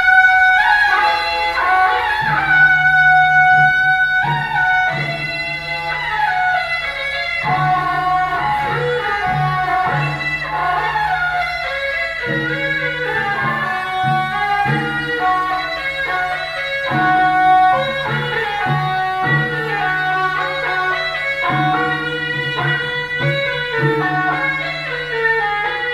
Beitou - Traditional temple festivals
Traditional temple festivals, Gong, Traditional musical instruments, Binaural recordings
Taipei City, Taiwan